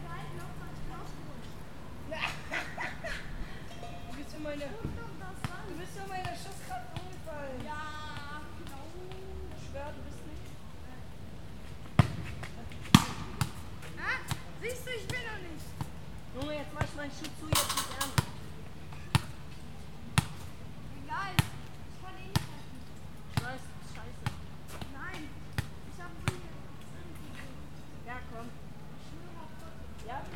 kids playing football in a fenced area. comeniuzplatz, friederichshain, berlin. recorded with zoom 4hn - normalized.
the weather is beautiful.